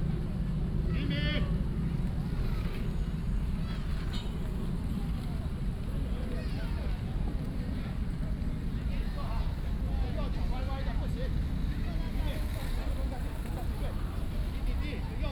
Daan Forest Park, 大安區 台北市 - Skates field
Skates field, Many children learn skates, Aircraft flying through
Taipei City, Taiwan